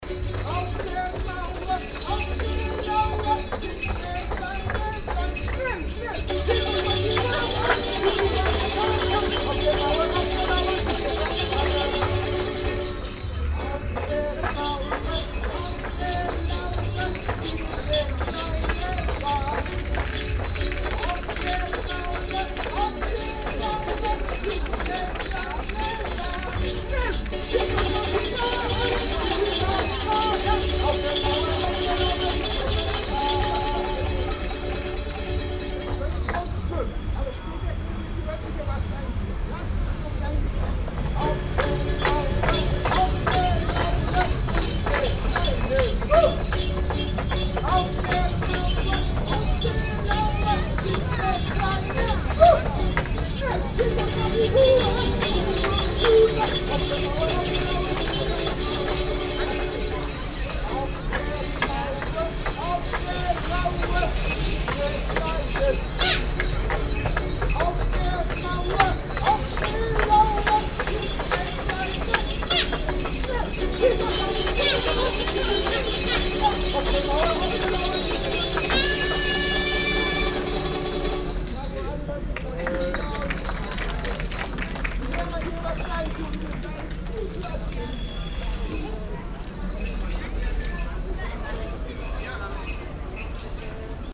cologne, am domhof, vor museum ludwig, puppenspieler
älterer puppenspieler mit kinderunterstützung vor dem museum ludwig, sonntag nachmittags
soundmap nrw: social ambiences, art places and topographic field recordings
24 September 2008